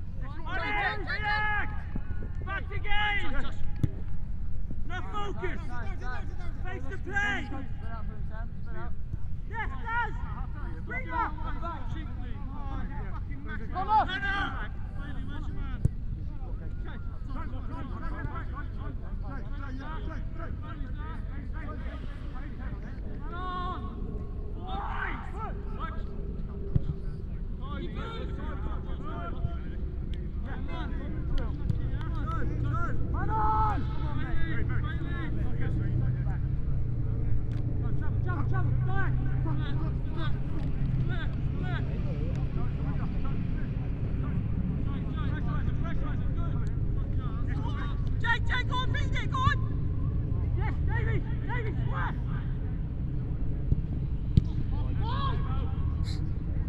Reading Rd, Reading, UK - Woodcote and Stoke Row FC
Pre-season inter-club friendly match between Woodcote and Stoke Row FC main team and their reserve team played on the village green. Recorded using a Jecklin disk with two Sennheiser 8020s on a Sound Devices SD788T.